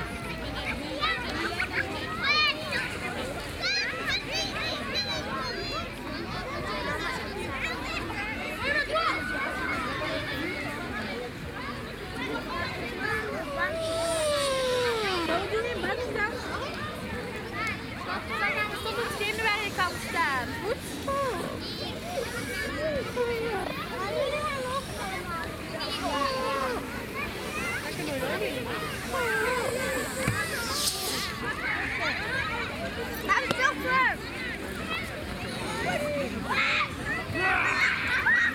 Hofstade Strand, Tervuursesteenweg, Zemst, Belgium - Beach ambience
Sunny afternoon, children playing.
Binaural recording, listen with headphones.